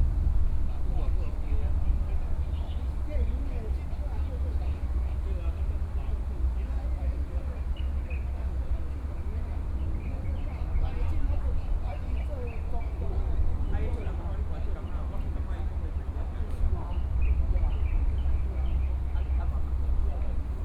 衛武營都會公園, Kaohsiung City - in the Park
Sitting in the Park, Birds singing, Traffic Sound, A group of people chatting and rest